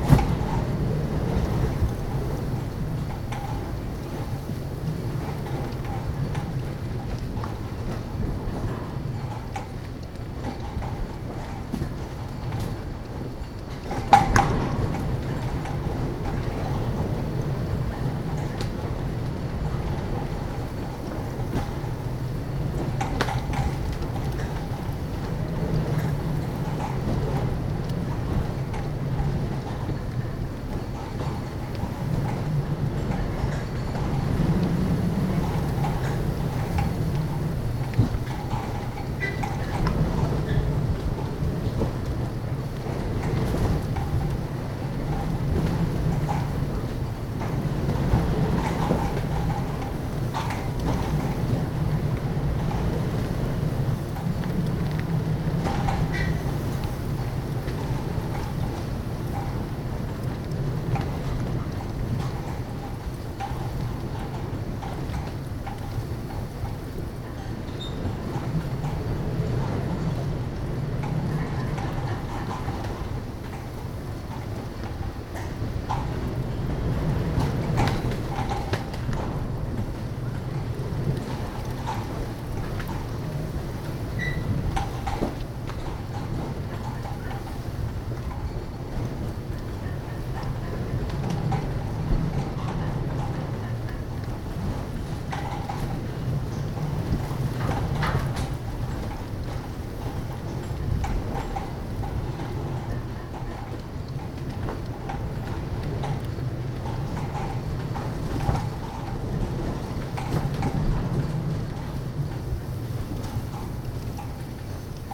Vissershaven, Den Haag, Nederland - Wind blowing through 'The Hague Beach Stadium'
A stormy wind blowing through a deserted Beach Stadium in Scheveningen. The sound was more spectacular and diverse on a few other spots but I don't have the right equipment for that kind of wind.
Binaural recording.
11 July, ~21:00